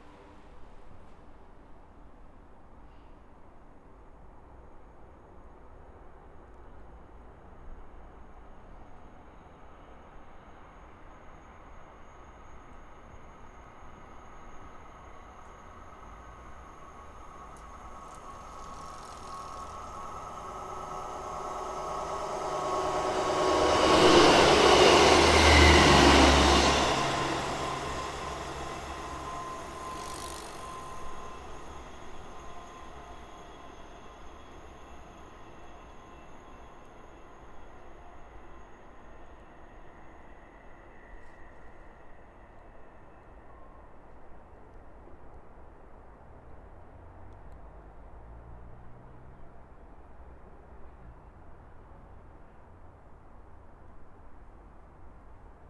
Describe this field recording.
Standing on a bike path facing away from the train lines, you can hear bikes coming fast downhill from right to left, and struggling slowly up from left to right. A couple of trains pass in each direction. Recorded w/ an Audio Technica BP4029 (MS stereo shotgun) into a Sound Devices 633 mixer.